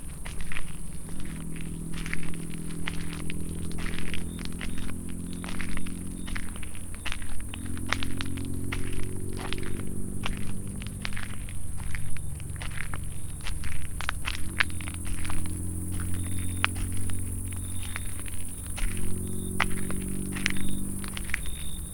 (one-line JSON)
{"title": "path of seasons, round path, piramida - crickets, nightbird, walk", "date": "2013-08-08 23:35:00", "latitude": "46.57", "longitude": "15.65", "timezone": "Europe/Ljubljana"}